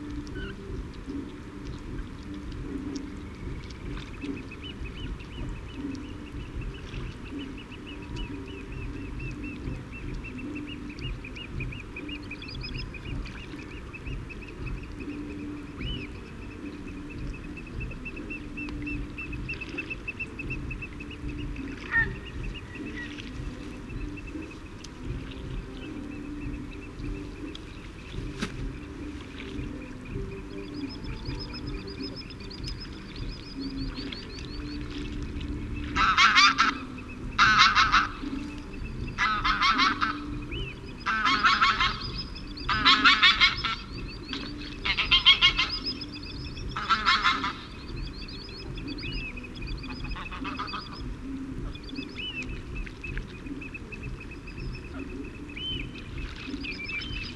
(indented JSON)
{
  "title": "Isle of Mull, UK - lochan soundscape with ceilidh ...",
  "date": "2009-04-26 22:00:00",
  "description": "lochan soundscape with ceilidh on going in the background ... fixed parabolic to minidisk ... bird calls and song ... redshank ... common sandpiper ... tawny owl ... greylag ... oystercatcher ... curlew ... grey heron ... the redshank may be in cop ...",
  "latitude": "56.58",
  "longitude": "-6.19",
  "altitude": "3",
  "timezone": "GMT+1"
}